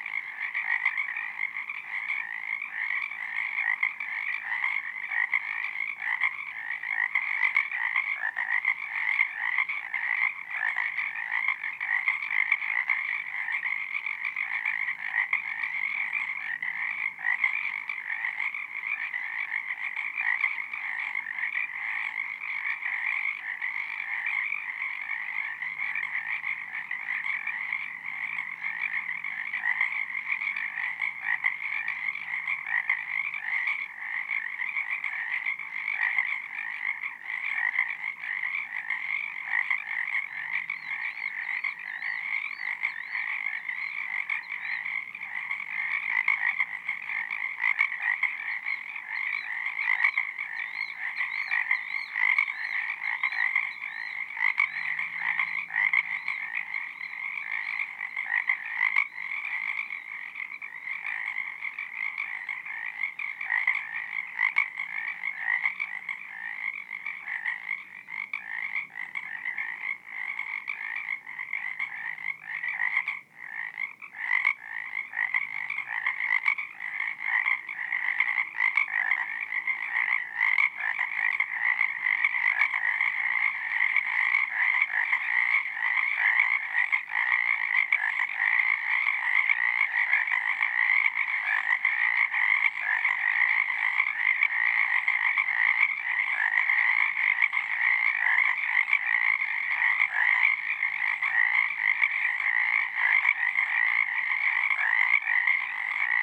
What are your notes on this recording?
Metabolic Studio Sonic Division Archives: Recording of Frogs taken at midnight on Owen's River Bank. Recorded on Zoom H4N